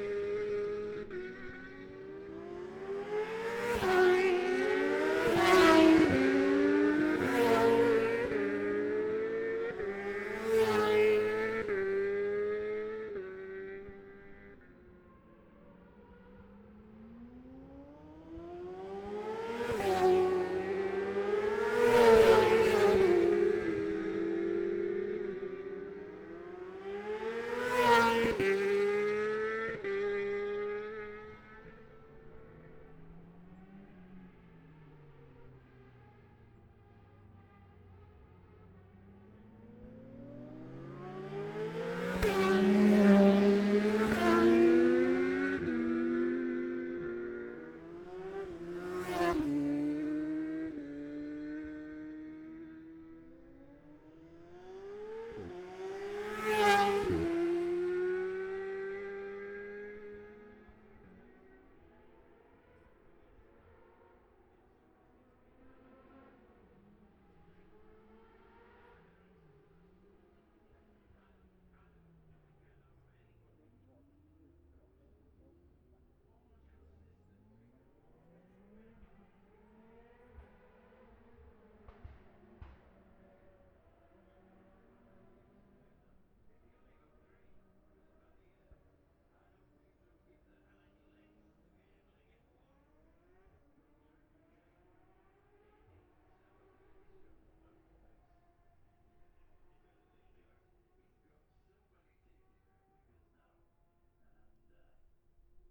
Jacksons Ln, Scarborough, UK - olivers mount road racing 2021 ...
bob smith spring cup ... 600cc group B qualifying ... luhd pm-01 mics to zoom h5 ...